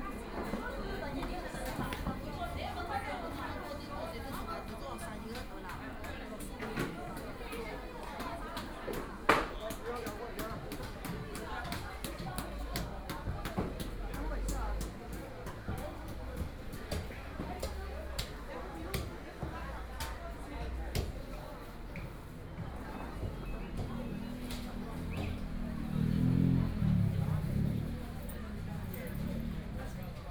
Roadside Market, Indoor market, Binaural recordings, Zoom H6+ Soundman OKM II